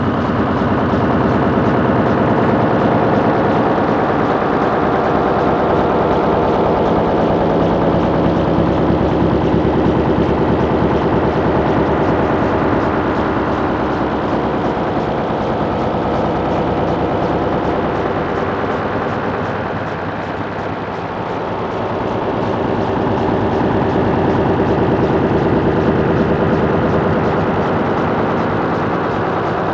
EREsecondERE/cortex- Mere/Dans la zone de lHippocampe_TTM2LMR-reflect_installation 2010
Toulouse, France, 1 August 2010